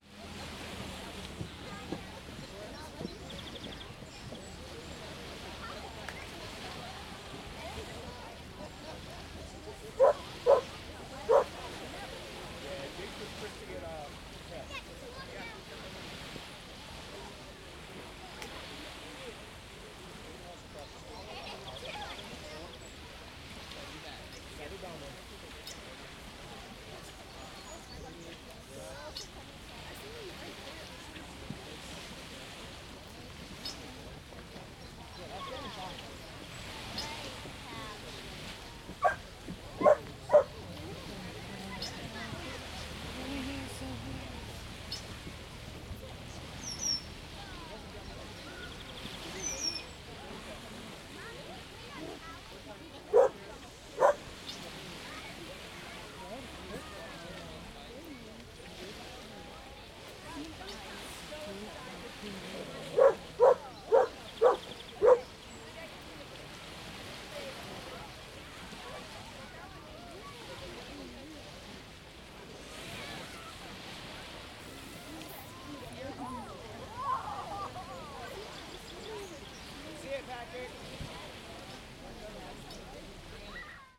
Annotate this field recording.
Gathering to watch the sunset at the Blue Stairs